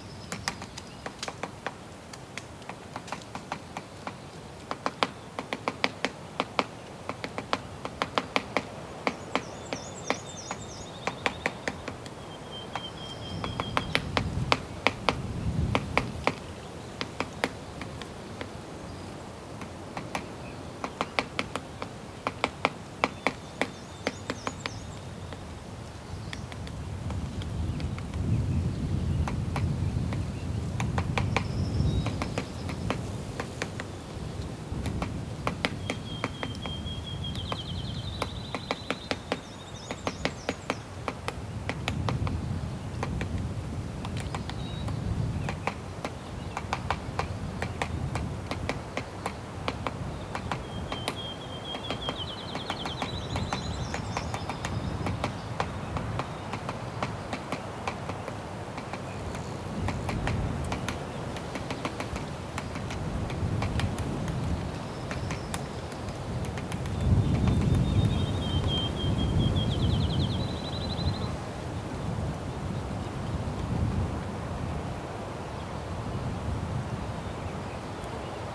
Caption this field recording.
Some birds, a woodpecker and light wind in the bush. Recorded in the Tall Grass Prairie Reserve, in Oklahoma. Sound recorded by a MS setup Schoeps CCM41+CCM8 Sound Devices 788T recorder with CL8 MS is encoded in STEREO Left-Right recorded in may 2013 in Oklahoma, USA.